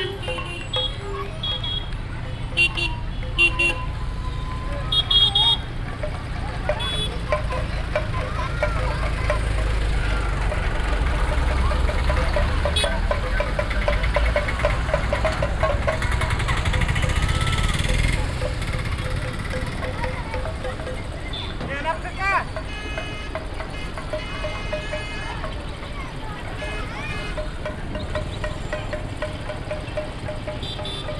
Saundatti, Crossroads, Children and percussions
India, Karnataka, Saundatti, crossroads, horns, children, percussions